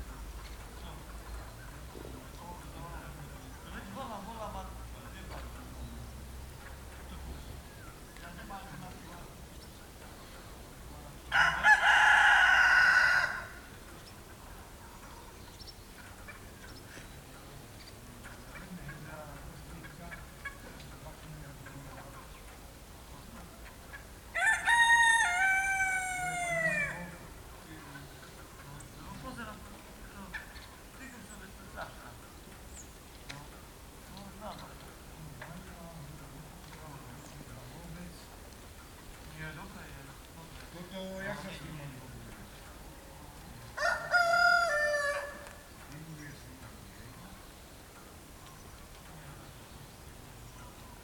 Očová, Slovakia, Mateja Bela Funtíka - o osveti / on enlightenment
Binaural recording made at the birthplace of the proponent of Slovak Enlightenment Matej (Matthias) Bel (1684 – 1749)